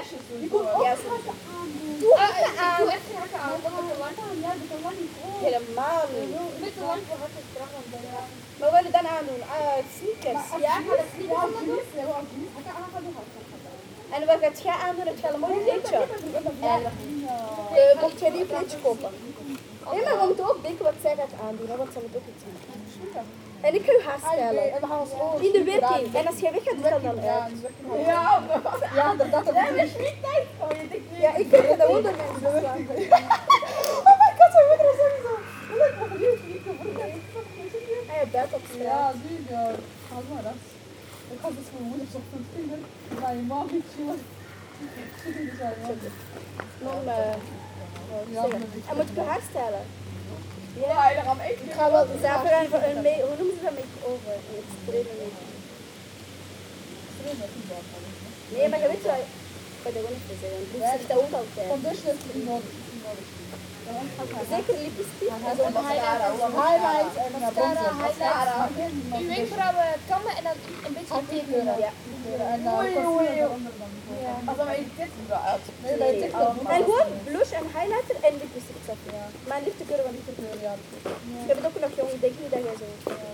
Leuven, Belgique - Young people in the park
In a quiet park, wind in the sycamores and some young people discussing.
13 October 2018, 16:05